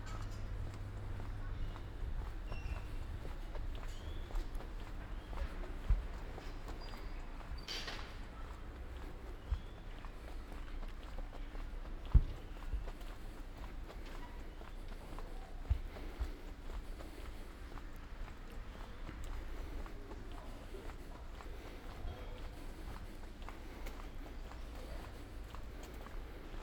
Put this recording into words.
"Sunday walk with ice cream and bells in the time of COVID19" Soundwalk, Chapter XCIII of Ascolto il tuo cuore, città. I listen to your heart, city, Sunday, May 31st 2020. San Salvario district Turin, walk to a borderline “far” destination. One way trip eighty-two days after (but day twenty-eight of Phase II and day fifteen of Phase IIB and day nine of Phase IIC) of emergency disposition due to the epidemic of COVID19. Start at 11:42 a.m. end at 00:18 p.m. duration of recording 26'10'', The entire path is associated with a synchronized GPS track recorded in the (kmz, kml, gpx) files downloadable here: